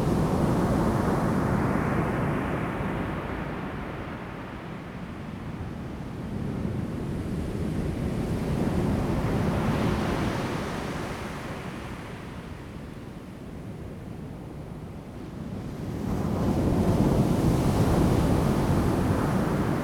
太麻里海灘, Taitung County - Sound of the waves
Sound of the waves
Zoom H2n MS+XY